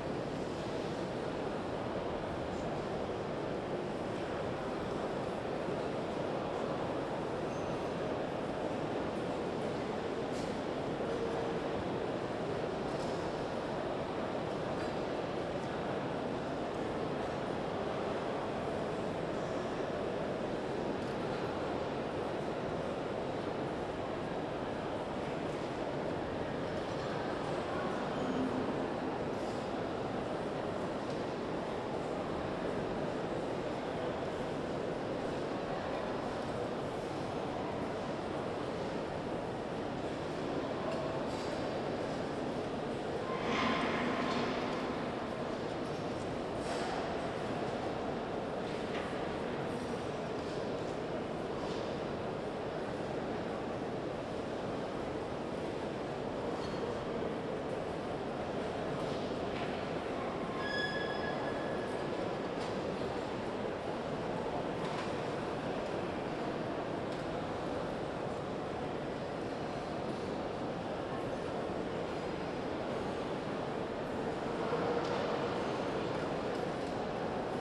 Ich stehe im Treppenhaus, oberhalb des Lichthofes.